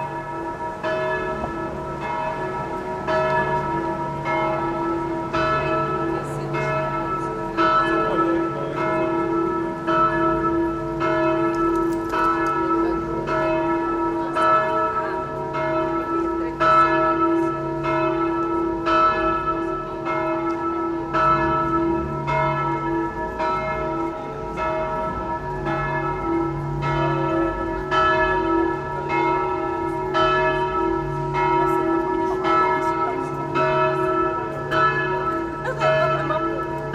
Sv. Jilji
Bells ringing on a Sunday at 6pm
Praha 1-Staré Město, Czech Republic